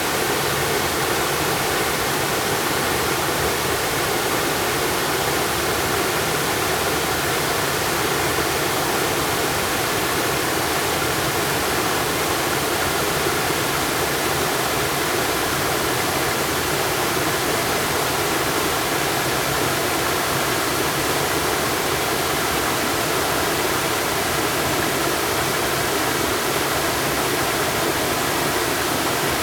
水上瀑布, 桃米里, Taiwan - waterfall
The sound of waterfall
Zoom H2n MS+XY +Spatial audio
Puli Township, 水上巷, 28 July 2016